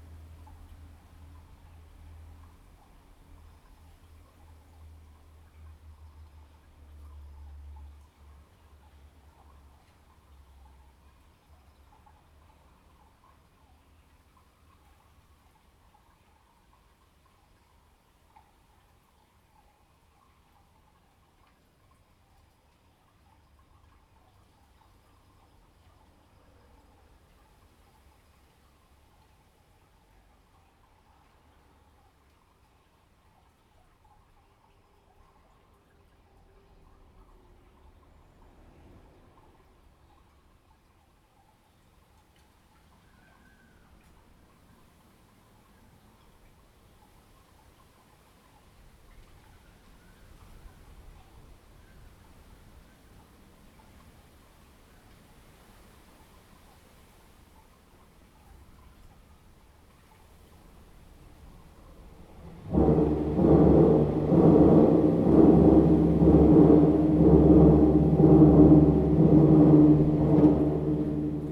中華路五段375巷, Xiangshan Dist., Hsinchu City - In the channel below the track
In the channel below the track, Water flow sound, The train passes by, Zoom H2n MS+XY